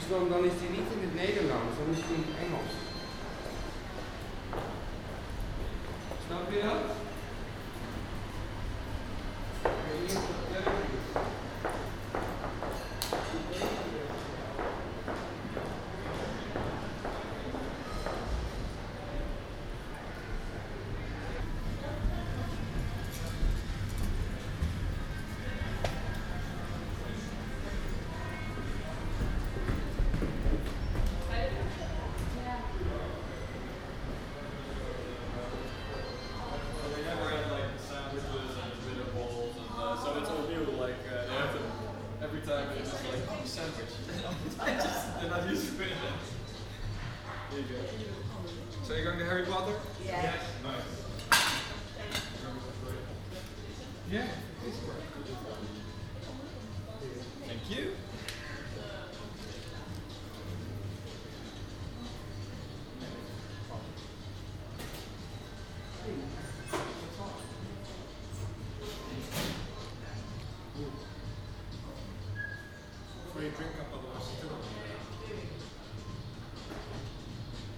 Conversation around the entrance hall of the Cinema Pathé Buitenhof and Café Des Deux Villes.
Recorded as part of The Hague Sound City for State-X/Newforms 2010.